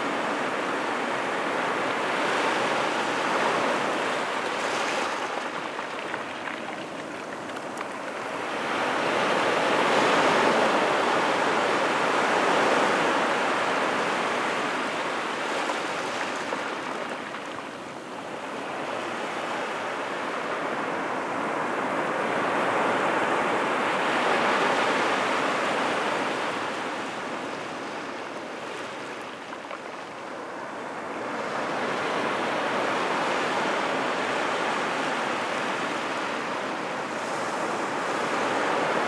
La Faute-sur-Mer, France - The sea
Recording of the sea during high tide, with shells rolling into the waves.